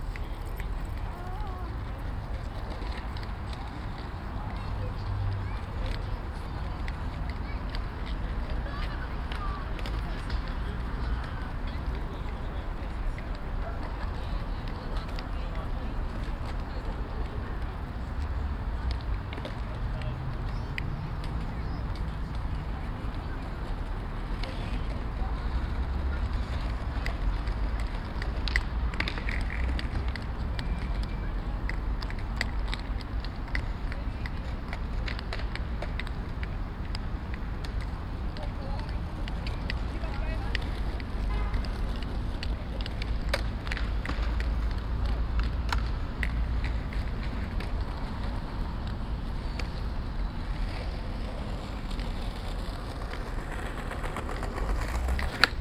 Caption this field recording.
Distant traffic, a skateboard and a small kid ‘walking’ withs skates. Binaural recording.